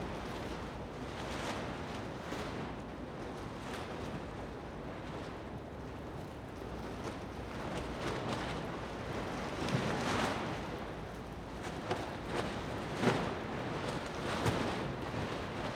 Gotenburger Str., Berlin, Deutschland - Gotenburger Strasse, Berlin - Tarp on a scaffold flapping in the wind
A pretty windy day in Berlin. The tarp covering a very large scaffold at the school building is flapping in the wind. Some parts are already loose, there is also a small plastic bag blowing up and flattering in the wind. From time to time there is also deep whistling sound: the scaffolding tubes are blown by the wind.
[Beyerdynamic MCE 82, Sony PCM-D100]